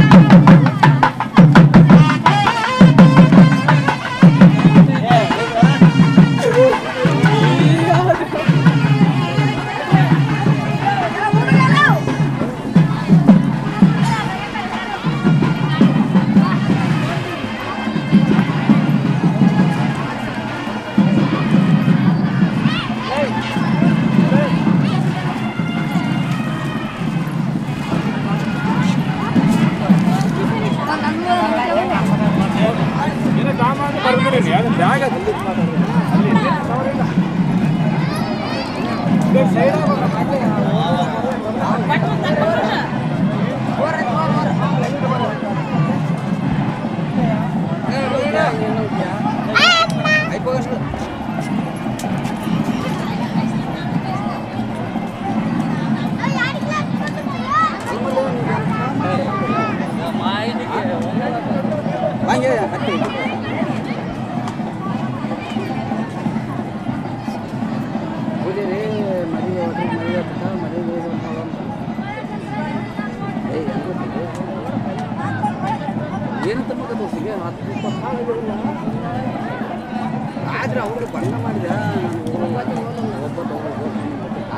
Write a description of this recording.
India, Karnataka, Hampi, Virupaksha temple, marriage, music